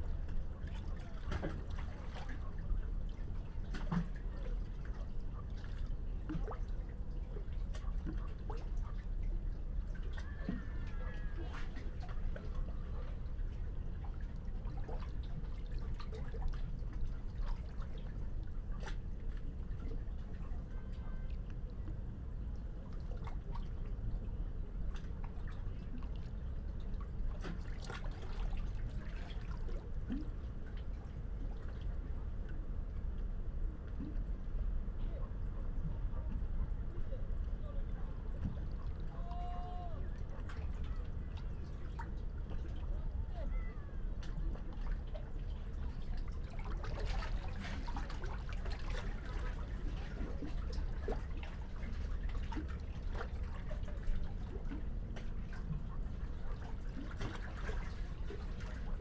Rijeka, Croatia, Kantrida - Binaural Sea Under Rocks
EM172 -> PCM-D50
17 September 2012, 18:36